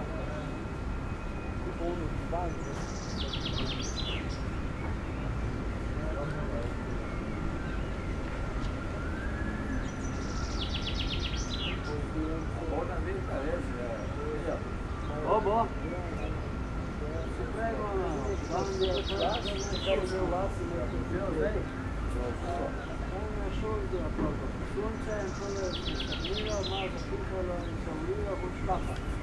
Bus Station, Nova Gorica, Slovenia - Birds and folk chatting
Recorded under a big tree in the main bus station near the Bus bar.
6 June 2017